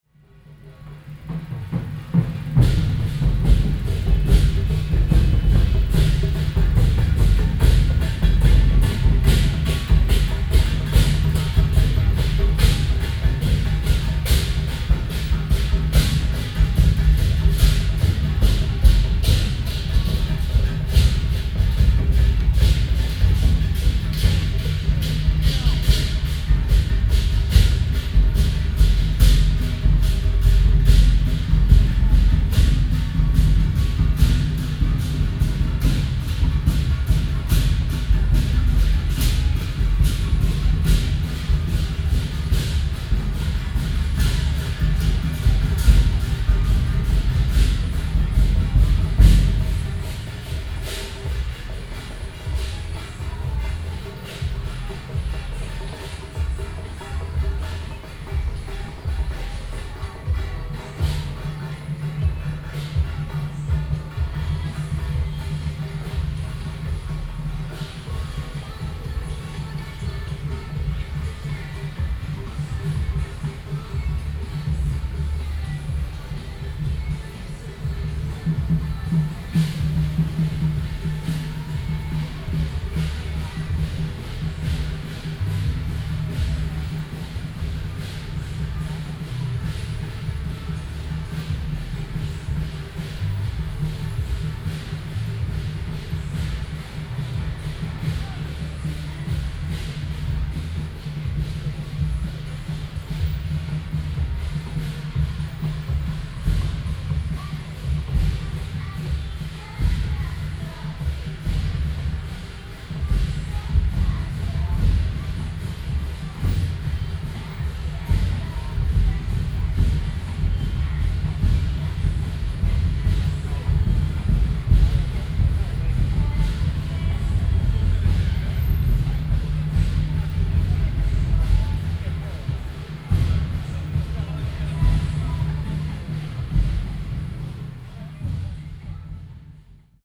Sec., Changsha St., Wanhua Dist., Taipei City - Traditional temple festivals
Firework, Traditional temple festivals, Gong, Traditional musical instruments, Binaural recordings, ( Sound and Taiwan - Taiwan SoundMap project / SoundMap20121115-27 )